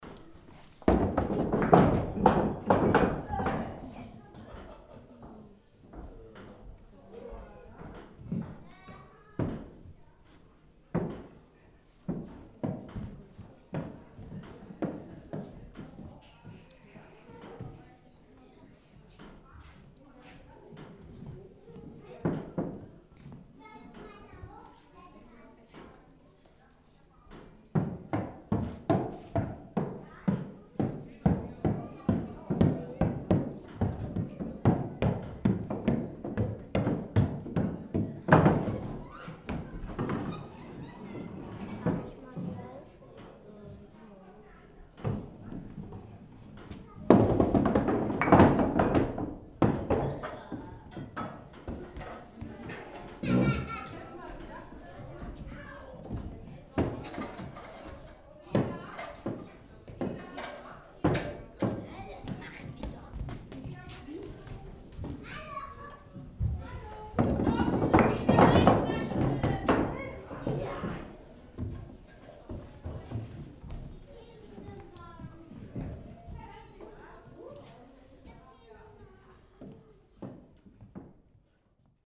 lindlar, bergisches freilichtmuseum, skittle alley
an old handmade skittle alley in a historical farm yard building.
soundmap nrw - social ambiences and topographic field recordings